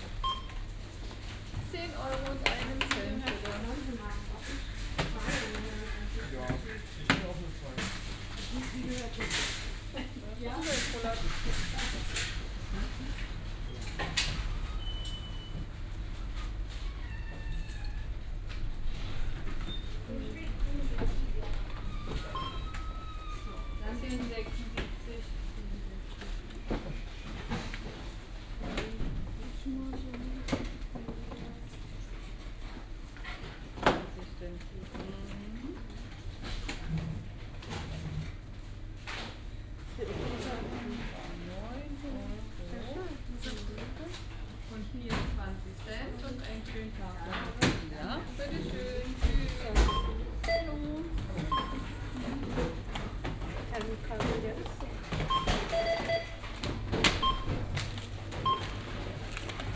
Neukölln, Berlin, Deutschland - Aldi supermarket
Ambience at Aldi supermarket, Kottbusser Damm. This was one of the cheap and ugly discounters, it was frequented by all sort of people and nationalities. It closed its doors in June 2012.